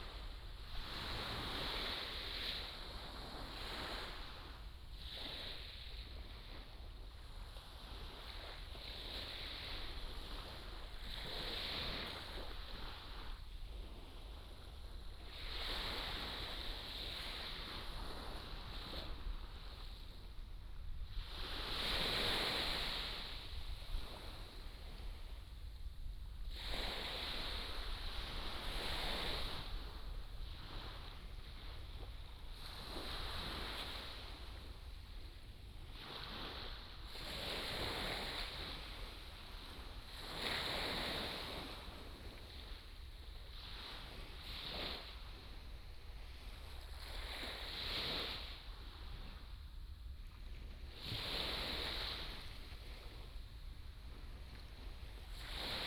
Jinning Township, Kinmen County - sound of the waves
sound of the waves
金門縣 (Kinmen), 福建省, Mainland - Taiwan Border